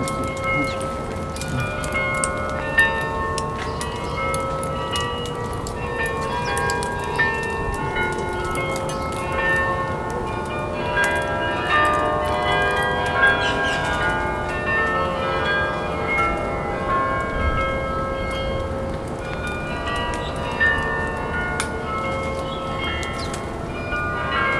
Raamgracht, Amsterdam, Netherlands - Sparrows, Doves, Carillon of Zuiderkerk
Recorded under a shrub, one of the few places in the city where sparrows still meet